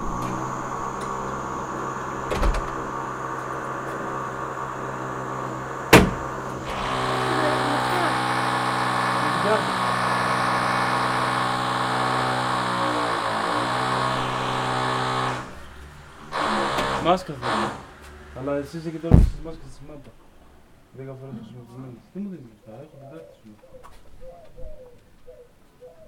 Επαρ.Οδ. Φιλώτας - Άρνισσα, Αντίγονος 530 70, Ελλάδα - House renovation and local sailor
Record by: Alexandros Hadjitimotheou
Περιφέρεια Δυτικής Μακεδονίας, Αποκεντρωμένη Διοίκηση Ηπείρου - Δυτικής Μακεδονίας, Ελλάς